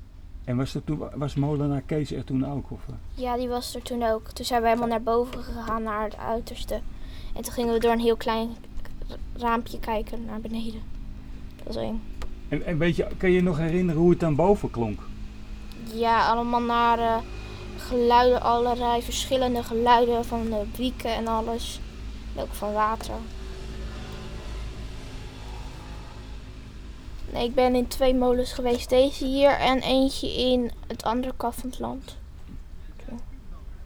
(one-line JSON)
{"title": "Celina is al eens in de molen geweest", "date": "2011-09-10 15:26:00", "description": "Celina vertelt over de molen en geluiden bij haar school", "latitude": "52.16", "longitude": "4.45", "timezone": "Europe/Amsterdam"}